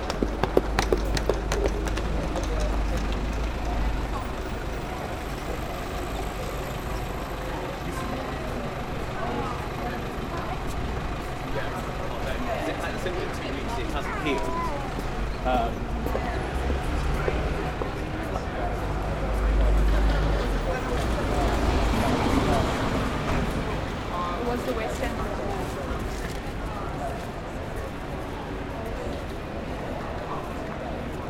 {"title": "St Martin Ln, Londres, Royaume-Uni - St Martin Ln", "date": "2016-03-15 13:17:00", "description": "Ambiance in the street, Zoom H6", "latitude": "51.51", "longitude": "-0.13", "altitude": "31", "timezone": "Europe/London"}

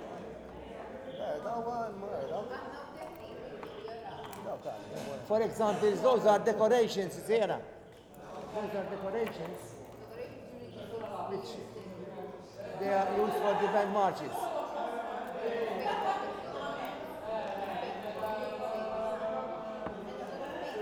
{"title": "Il-Ħerba, Żejtun, Malta - Zejtun Band Club", "date": "2017-04-01 19:00:00", "description": "Zejtun's mayor talks at Zejtun Band Club, of which he is also the president\n(Sony PCM D50)", "latitude": "35.85", "longitude": "14.53", "altitude": "62", "timezone": "Europe/Malta"}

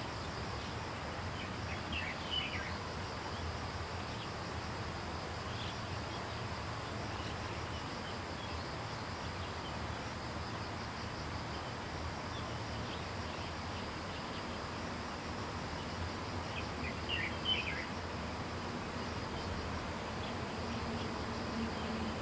{"title": "桃米里, 埔里鎮 Puli Township - Birds and Stream", "date": "2015-09-17 07:02:00", "description": "Birds singing, Beside farmland, Brook\nZoom H2n MS+XY", "latitude": "23.94", "longitude": "120.94", "altitude": "452", "timezone": "Asia/Taipei"}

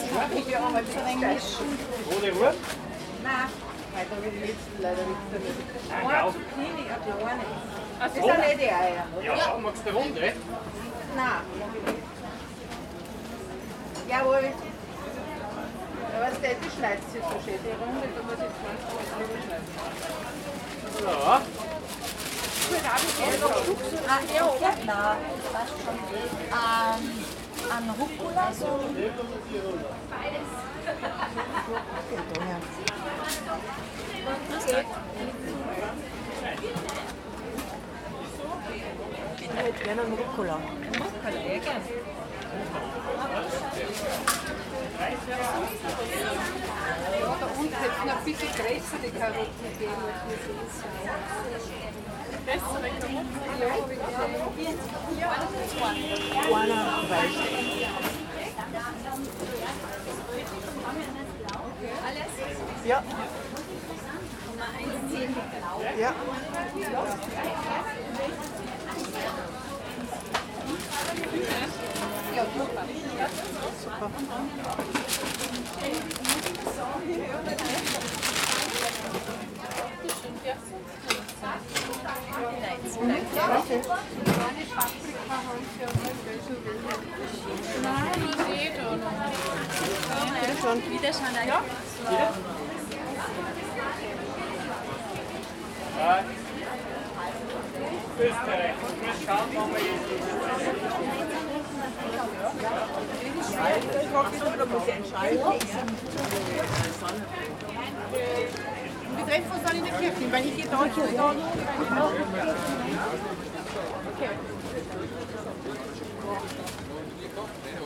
{"title": "Faberstraße, Salzburg, Österreich - Schranne Salzburg 1", "date": "2021-07-08 09:45:00", "description": "Wochenmarkt in Salzburg, jeden Donnerstag. Weekly market in Salzburg, every Thursday", "latitude": "47.81", "longitude": "13.04", "altitude": "431", "timezone": "Europe/Vienna"}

